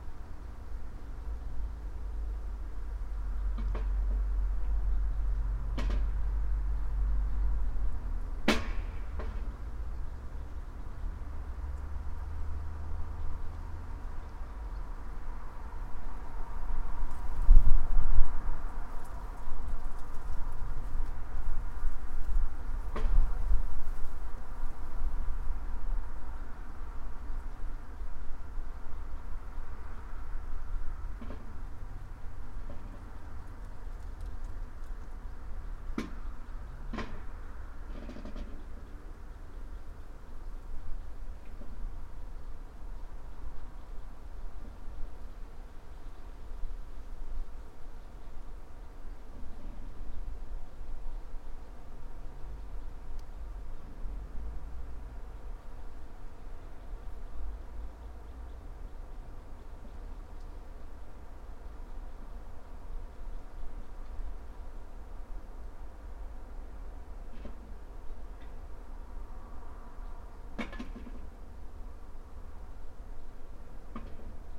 quarry, Marušići, Croatia - void voices - stony chambers of exploitation - reflector
few meters below reflector, winter